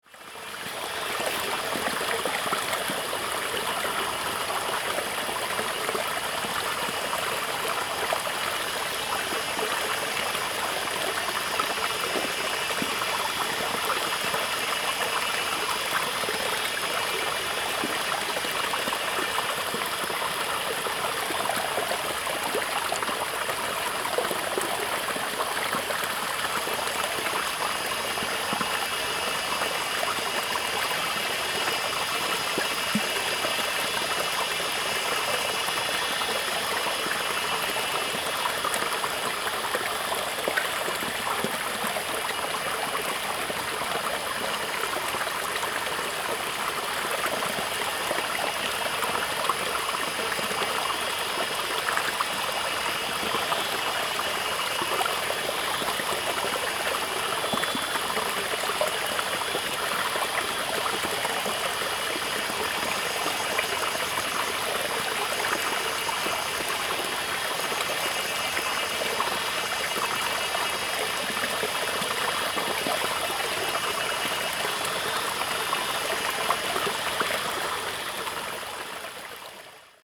埔里鎮成功里, Taiwan - Cicada and stream sounds
Cicada and stream sounds
Zoom H2n MS+XY